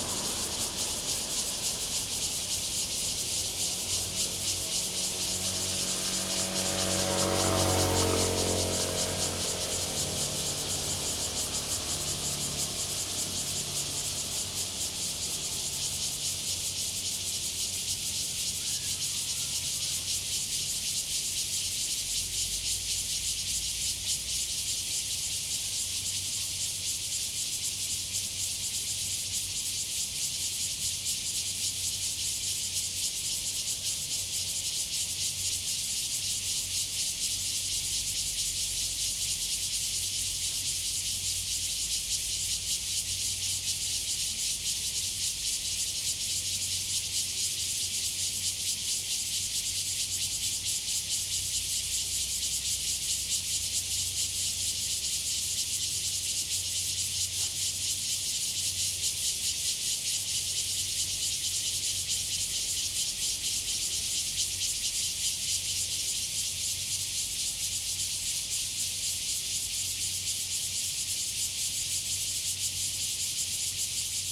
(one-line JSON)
{"title": "Longsheng Rd., Guanshan Township - Cicadas sound", "date": "2014-09-07 10:53:00", "description": "Cicadas sound, Traffic Sound, Very hot weather\nZoom H2n MS+ XY", "latitude": "23.04", "longitude": "121.17", "altitude": "215", "timezone": "Asia/Taipei"}